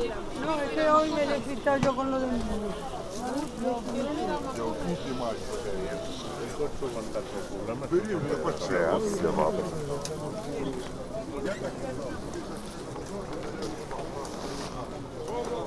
Mercat dels Encants Vells
Emblematic open-market of junk, old-kind objects and clothes.